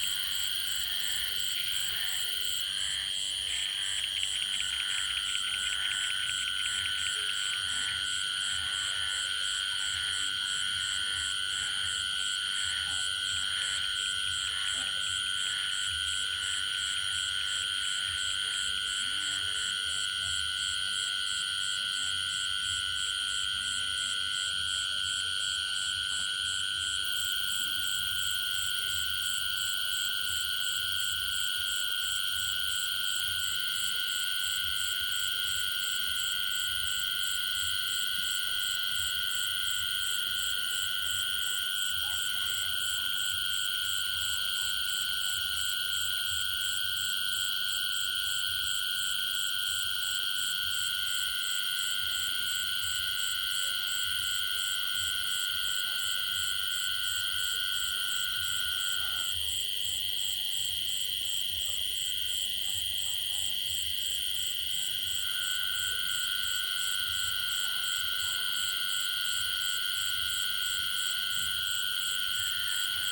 Koforidua, Ghana - Amphibian Chorus, Ghana.
Variations of amphibian acoustic phenomena documented in Ghana. Specific species will be identified and documented off and onsite. Acoustic Ecologists are invited to join in this research.
*This soundscape will keep memory of the place as biodiversity is rapidly diminishing due to human settlements.
Recording format: Binaural.
Date: 22.08.2021.
Time: Between 8 and 9pm.
Recording gear: Soundman OKM II with XLR Adapter into ZOOM F4.
Eastern Region, Ghana